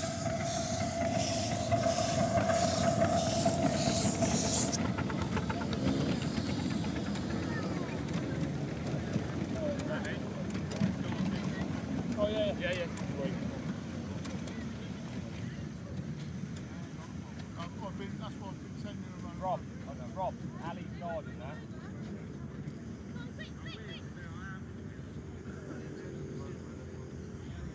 Amnerfield Railway, Amners Farm, Burghfield, UK - Miniature steam trains
This is the sound of the completely charming miniature railway at Amners Farm. A tiny bell signals for trains to go, and then miniature engines, waiting in the sidings, head off with a tiny toot-toot. The engines are powerful enough to power two little cars on which the public can sit, and the driver sits in front of the tiny train, shoveling tiny pieces of coal into the tiny fire that powers the tiny engine.
Burghfield, Reading, UK, 6 May 2017, ~13:00